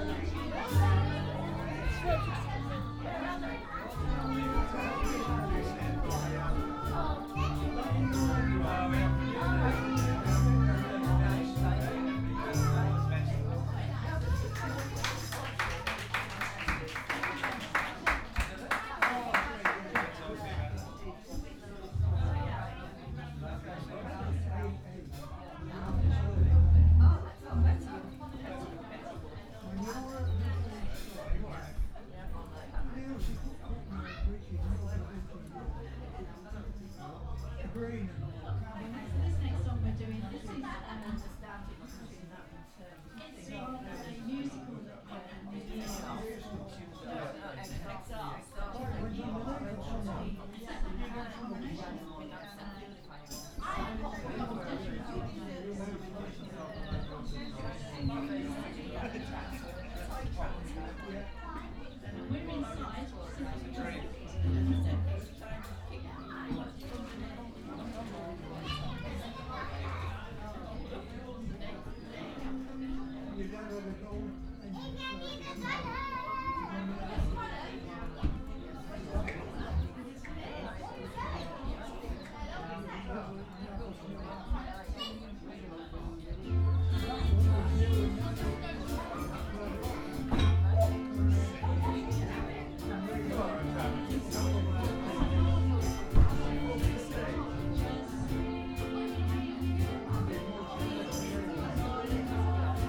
Post Office, Weaverthorpe, Malton, UK - platinum jubilee celebrations in a village hall ...
platinum jubilee celebrations in a village hall ... weaverthorpe ... binaural dummy head with luhd in ear mics to zoom h5 ... displays refreshments ... a ukulele band ...